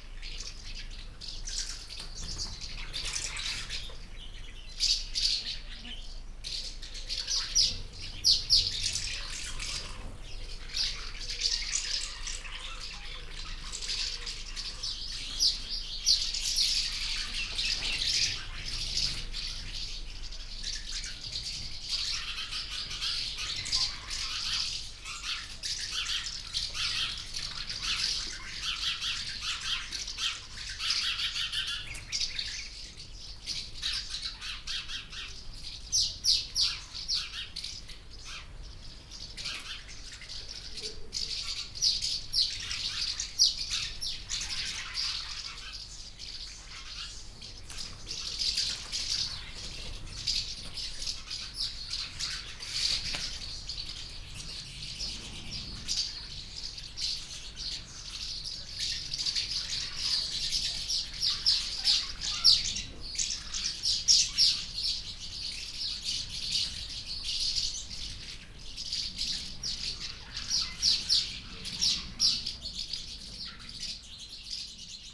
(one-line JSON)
{"title": "Odervorland Groß Neuendorf-Lebus, Deutschland - colony of housemartins", "date": "2015-05-31 19:10:00", "description": "Groß-Neuendorf, river Oder, former harbour building, a colony of housemartins (in german: Mehlschwalben)\n(Sony PCM D50, DPA4060)", "latitude": "52.70", "longitude": "14.41", "altitude": "9", "timezone": "Europe/Berlin"}